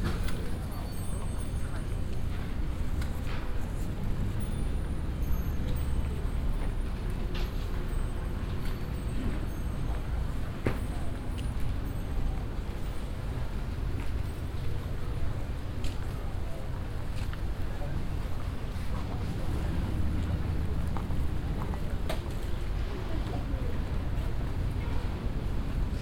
the flower market on a sunday morning - tourists shopping, several languages - in the distance the hooting of a boat on the nearby heerengracht channel
international city scapes - social ambiences and topographic field recordings